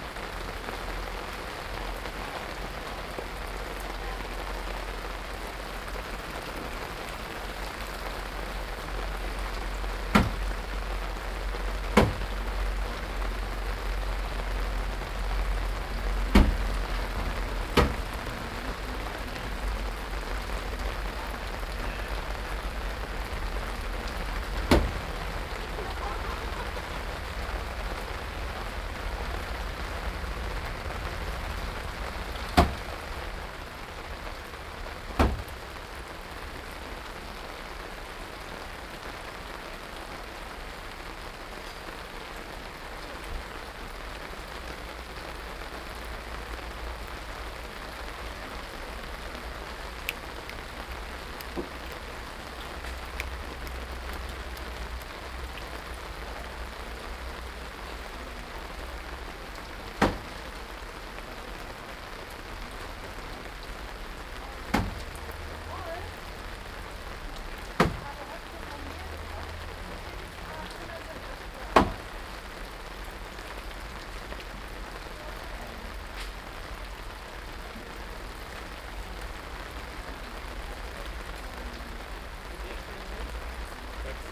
berlin, schwarzer kanal, construction
binaural recording, rain, construction, people
Berlin, Germany, 4 August 2011